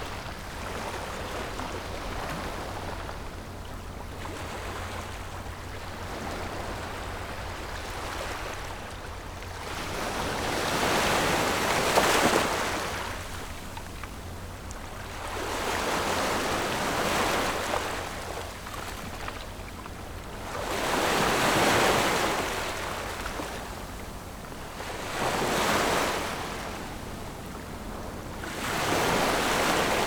At the beach, Sound of the waves
Zoom H6+ Rode NT4